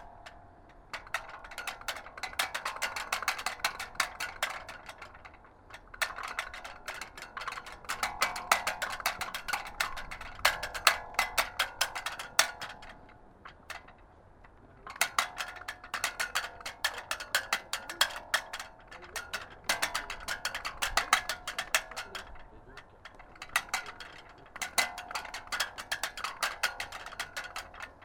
Knokke-Heist, Belgique - Flag in the wind
On a sunny and cold winter evening, sound of a flag in the wind.